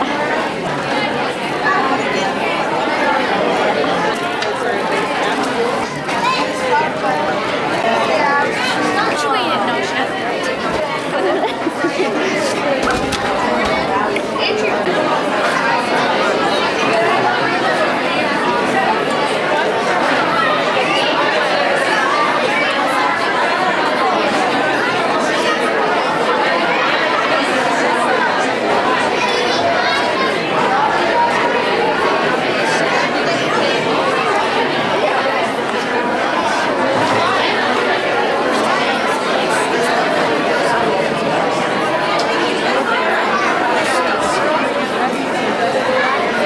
Sherwood Elementary - Auditorium #1
Graduation night at an elementary school. Bedlam.